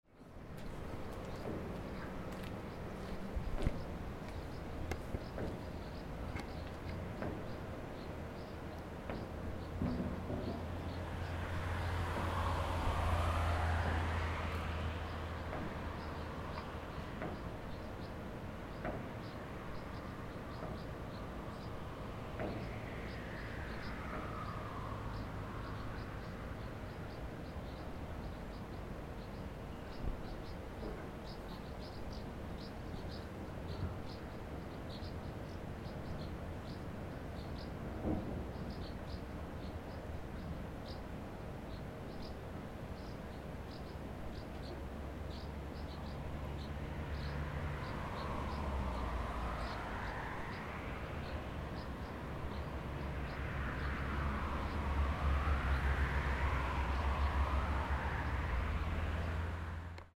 Lago die Poschiavo, kalt, klar und fischreich
Poschiavo, Switzerland, 19 July 2011, 11:09